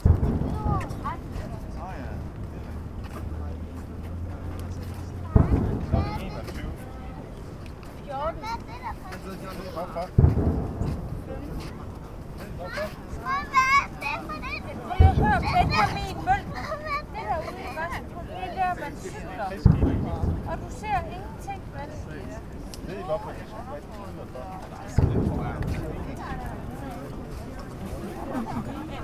Cannon shooting for Queen’s anniversary in the bakckground, at regular, slow rate. Tourists and locals pass by on new sliding bridge, by feet or bicycle. One can hear tourist boat at the end.
Tirs de canons pour l’anniversaire de la reine, à interval régulier. Toursites et locaux passent sur le pont, à pied et en vélo. On peut entendre un bateau de touriste sur la fin.
København, Denmark